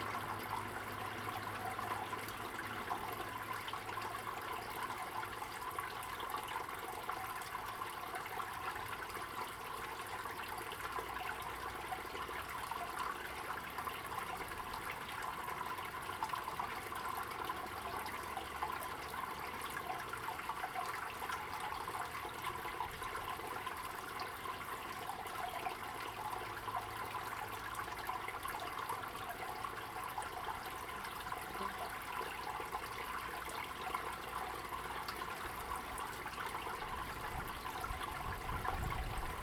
奇美村, Rueisuei Township - Cicadas and Water sound
Traffic Sound, Birds singing, Cicadas sound, Water sound
Zoom H2n MS+XY